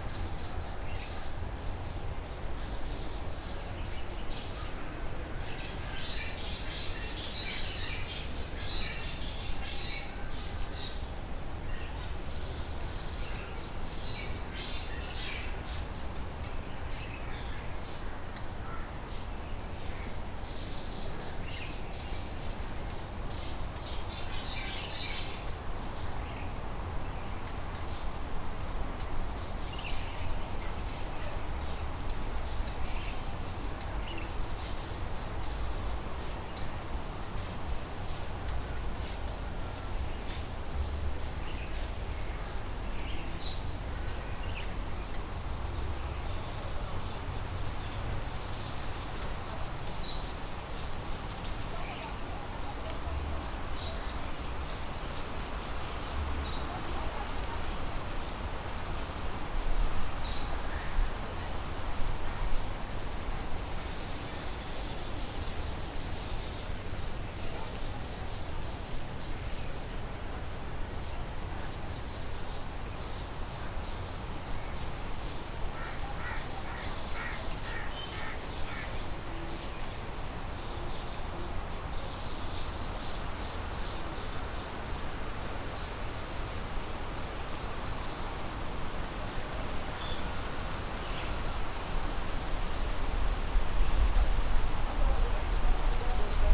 Seahorse Road, Kenia - Last day ambient
Early morning ambience at Sunset Villa porch in Seahorse Village, Kilifi, Kenya. Recorded with Zoom H5.
Coastal Kenya, Kenya, April 2021